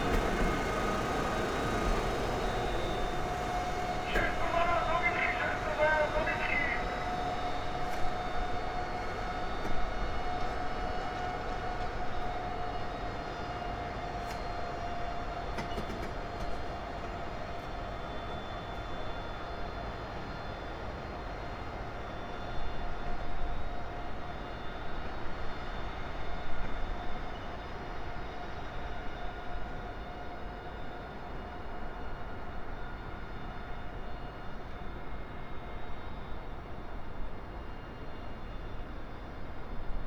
Tupého, Bratislava, Slovakia - Night activity in train yard Bratislava - hlavné
Night activity in train yard Bratislava - hlavné: railway worker with radio stanidng and waiting at rail switches; a train stops, honks the horn and pushes the carriages back, all the commands for the engine engineer can be heard through the radio of the railway worker; commands via station loudspeaker; the worker turns the rail switches; single engine comes and returns back.
Bratislava, Bratislavský kraj, Slovensko, 24 March 2016